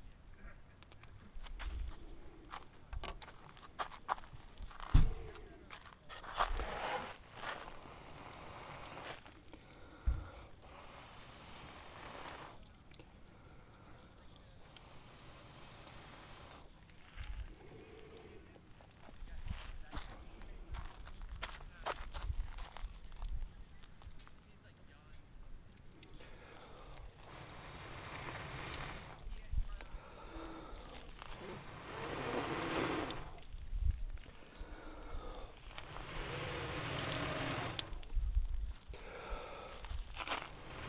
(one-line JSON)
{"title": "Whitney Portal Rd, California, USA - Fire near the campground", "date": "2021-04-02 17:29:00", "description": "Fire near the campground. Lone Pine, CA, just under Mt. Whitney.", "latitude": "36.60", "longitude": "-118.18", "altitude": "1792", "timezone": "America/Los_Angeles"}